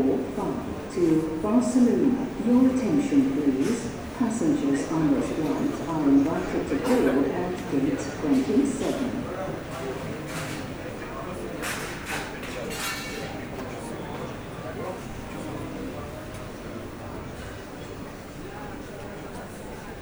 Khimki Sheremetyevo airport, Russia - Sheremetyevo airport part 2
Continuation of the recording.
Khimki, Moskovskaya oblast, Russia, September 2018